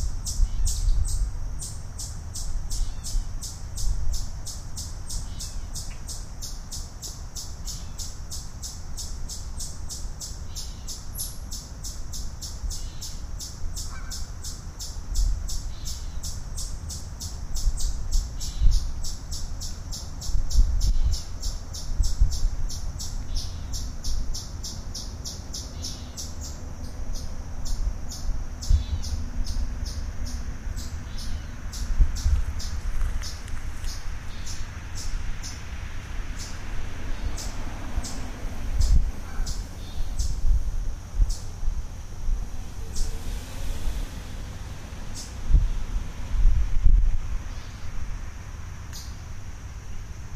Geese, Fishing, Birds, Nature preserve.
IL, USA, 22 September 2010, 10:00